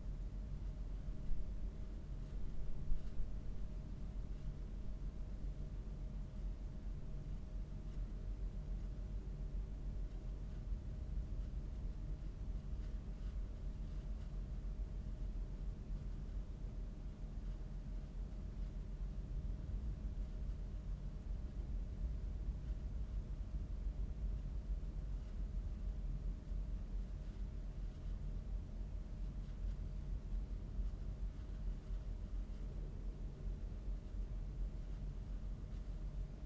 Auroville, Matrimandir, Inner chamber
world listening day, Auroville, India, Matrimandir, silence, meditation, inner chamber